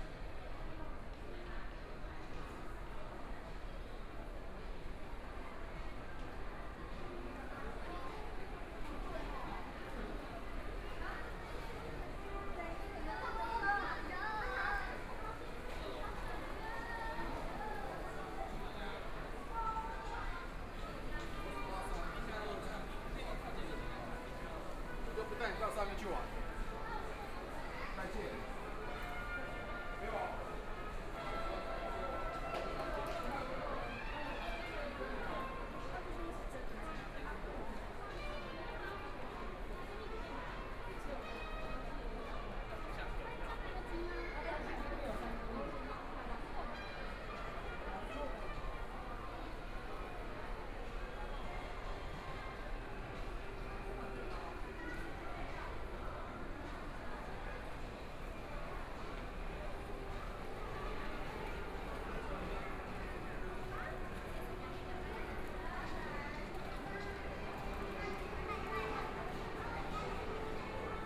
Miramar Entertainment Park, Taipei City - Shopping malls
Walking around the shopping mall, Binaural recordings, Zoom H4n+ Soundman OKM II
Taipei City, Taiwan